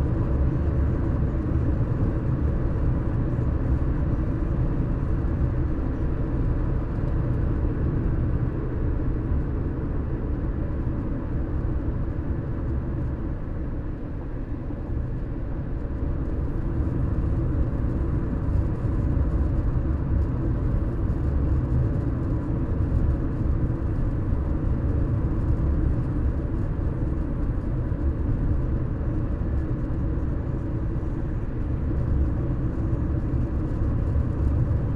Louisville, CO, USA - Silence of a Prius
4 February, 09:21